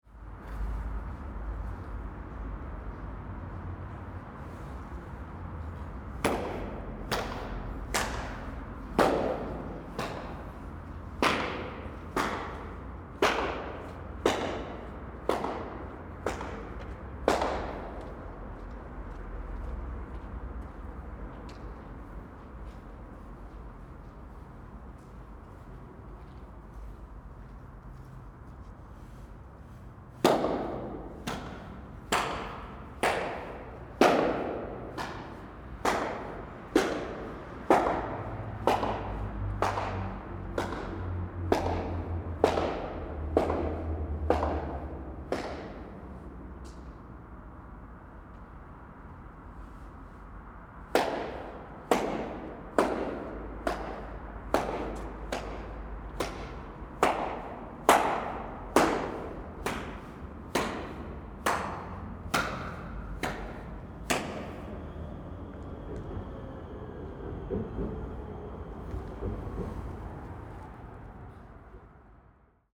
Hand clapping in the tunnel, Nádraží Braník, Praha-Praha, Czechia - Hand clapping in the tunnel
Here there is a long concrete tunnel under the road and tram tracks. There are some nice echoes and clapping your hands while walking though is a way to hear them. Trams sound through the tunnel structure as they pass overhead.